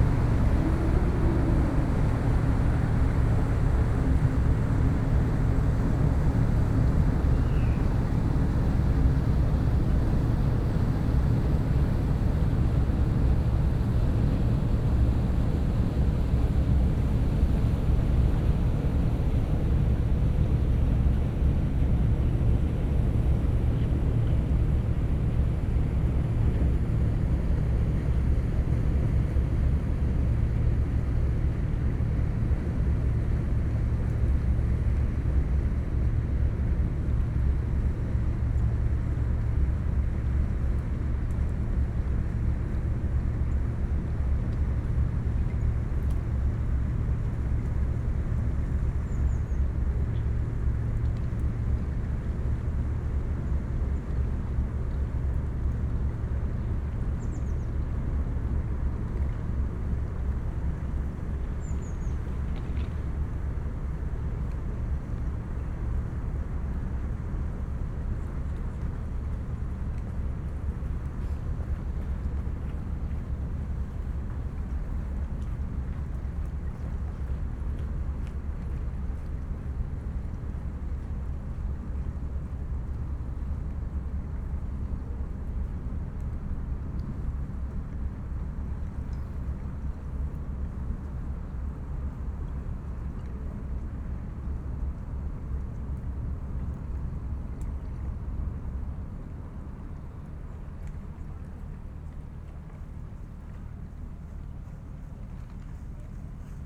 berlin, plänterwald: spreeufer - coal freighter breaking ice
Berlin Plänterwald, cold winter Sunday afternoon. a coal freighter on its way to the nearby heating plant breaks the ice on river spree, then continues the transport.
(Sony PCM D50, DPA4060)